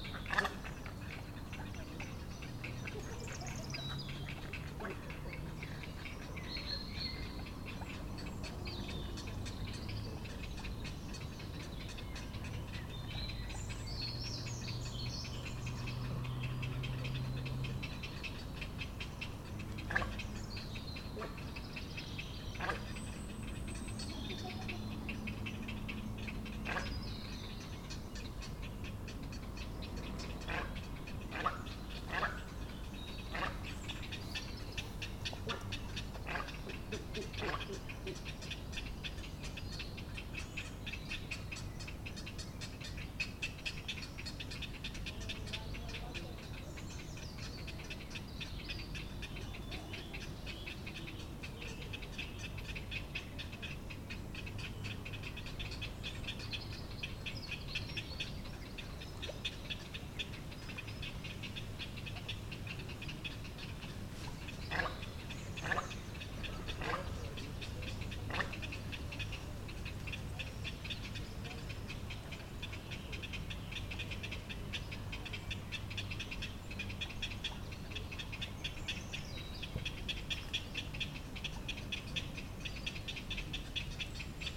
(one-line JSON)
{"title": "Atlantic Pond, Ballintemple, Cork, Ireland - After Sunset: Egret, Heron, Fox Screaming, Jogger", "date": "2020-04-25 21:20:00", "description": "Little Egrets and Herons nest on the Island. The Egrets make the strange, deep, wobbling gurgling sounds. The rhythmic call is Heron chicks in the nest.\nRecorded with a Roland R-07.", "latitude": "51.90", "longitude": "-8.43", "altitude": "3", "timezone": "Europe/Dublin"}